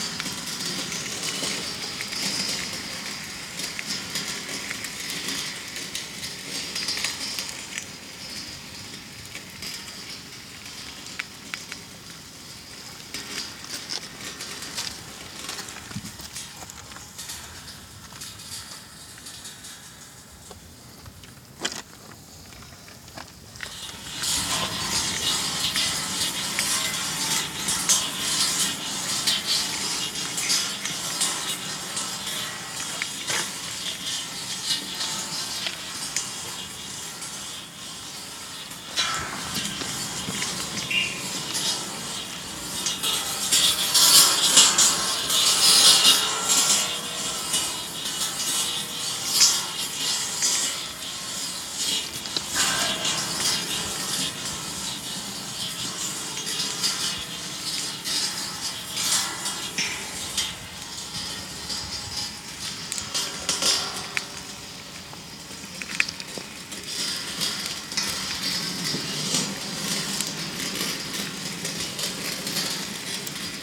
Morille-Salamanca, Art Graveyard, metal piece spinnig
Morille-Salamanca, Metal sculpture spinning, wind, birds, flies
9 July 2011, 15:13